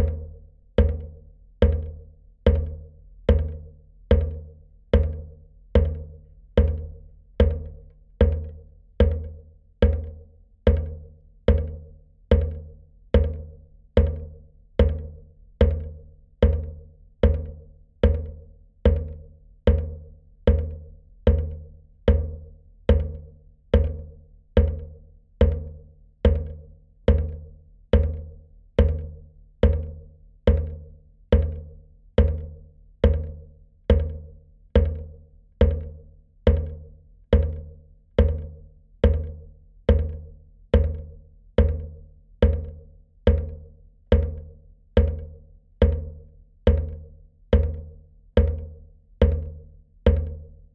Ilzenbergo k., Lithuania, rain pipe rhytmic
water drips from rain pipe. contact microphones
Panevėžio apskritis, Lietuva, 6 October